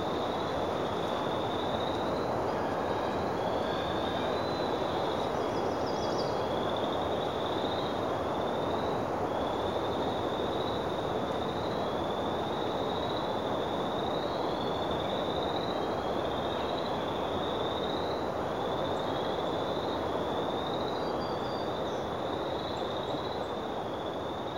Clam Lake, WI, USA - Former site of US Navy ELF antenna array
A very active frog pond, along a clearing. This clearing, and miles more like it, are the only evident remnant of the world's largest antenna array - The Clam Lake US Navy Extremely Low Frequency (ELF) Antenna Array. This array, miles of above-ground wiring, paired with a sister site near Witch Lake in Upper Peninsula Michigan, generated wavelengths 5000-6000 kilometers long. These subradio waves allowed for communications between submarines around the world to communicate to bases in the US.Health effects of ELF waves on humans and animals alike, especially wales and dolphins, are still unknown. Due to this site being extremely unpopular with the residents of Wisconsin and Michigan, this site was deactivated in 2004 after 19 years of use. The cleared paths are now used by snowmobilers and elk hunters.